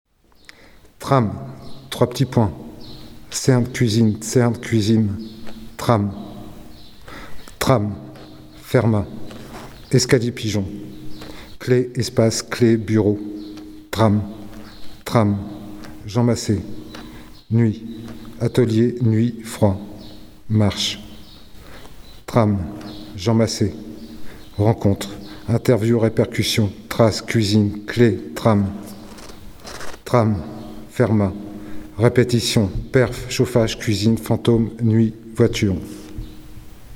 La Friche - Forge - Echo - Parcours
De Marine Mane, dit par BMZGD
Rheims, France, 27 May 2012